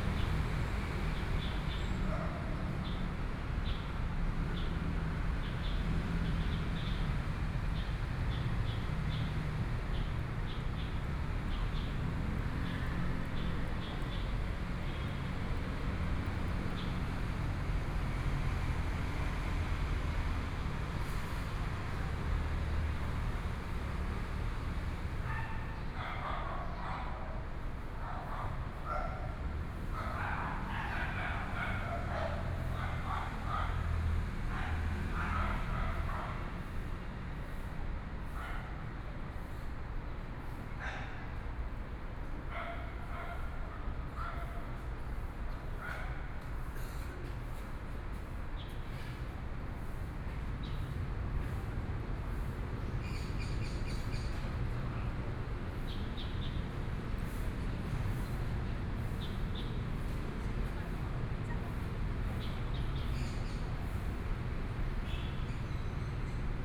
JinBei Park, Taipei City - Morning in the park
Morning in the park, Traffic Sound, Environmental sounds, Birdsong
Binaural recordings
Zhongshan District, Taipei City, Taiwan, 27 February 2014, 07:44